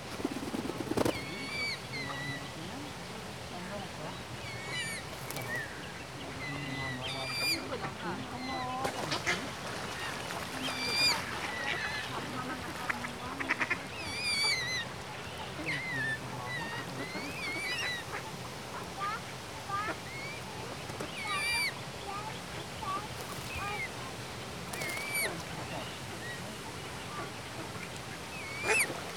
Morasko, close to Campus UAM, Moraskie ponds - swan with cygnets
a pond swarmed with different birds. swan with a few cygnets, ducks and their young, pigeons basking and fighting with each other on a cobbled bank. other bird spices i wasn't able to recognize, acting hostile towards other birds and chasing it away. a few Sunday strollers taking pictures and relaxing at the pond.
Poznan, Poland, 22 June 2014